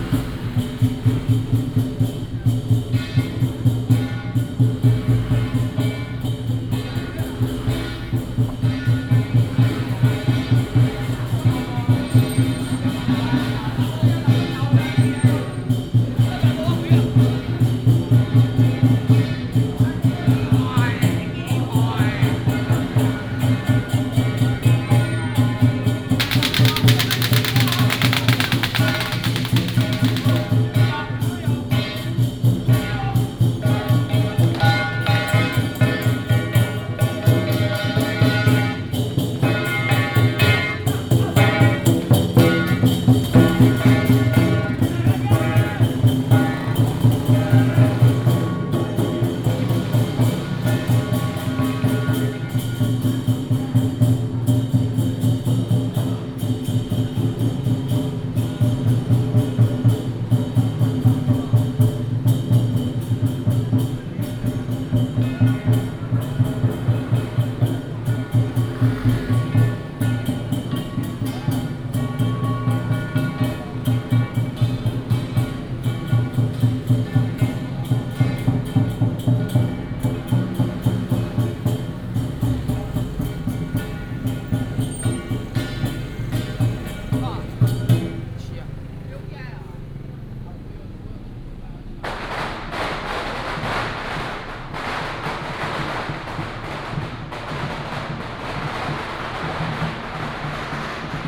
Shuidui St., Tamsui Dist. - Walking in a small alley
temple fair, Walking in a small alley
New Taipei City, Taiwan, March 2017